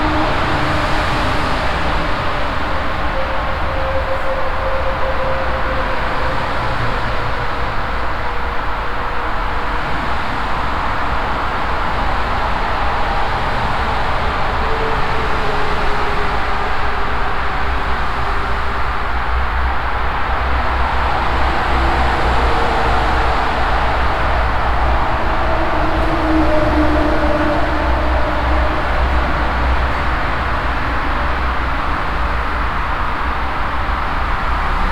Brück, Köln, Deutschland - Refrath, footway tunnel under highway A4
Inside another narrow footway tunnel underneath the highway A4. The sound of the constantly passing by traffic that is resonating inside the concrete tube.
soundmap nrw - social ambiences and topographic field recordings